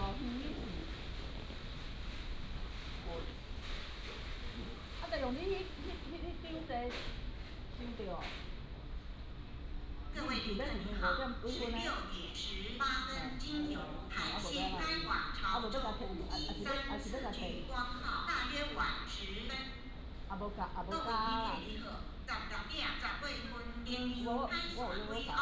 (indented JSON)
{
  "title": "Xinying Station, 台南市新營區 - At the station platform",
  "date": "2017-01-31 15:58:00",
  "description": "At the station platform, Station broadcasting, The train leaves the station",
  "latitude": "23.31",
  "longitude": "120.32",
  "altitude": "17",
  "timezone": "GMT+1"
}